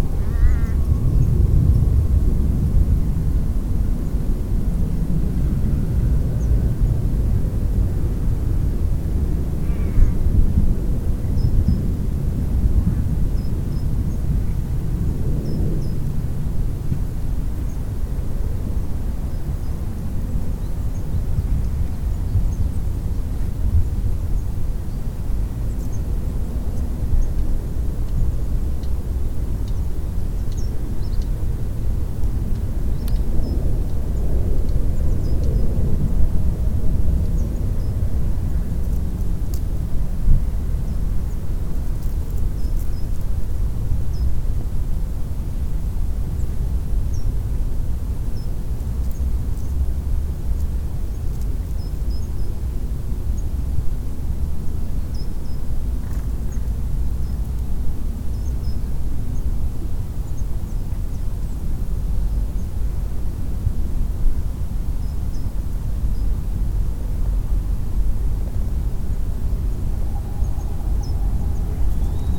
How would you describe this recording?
Sitting in the meadow with microphone facing to the park. Listing to birds left and right seemingly undisturbed by noise pollution. Recorder: Tascam DR-05